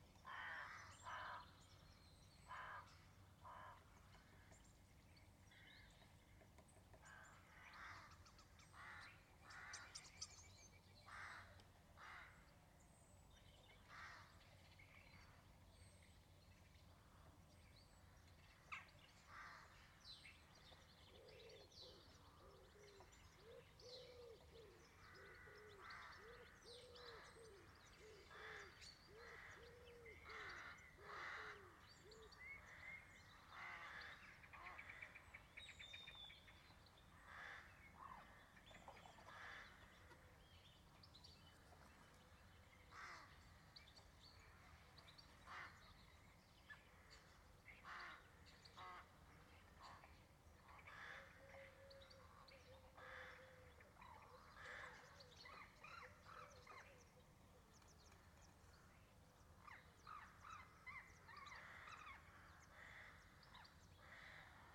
Village Green, Hesket Newmarket, Wigton, UK - Morning birdsong
Recorded on the village green using Lom microphones on a stereo bar.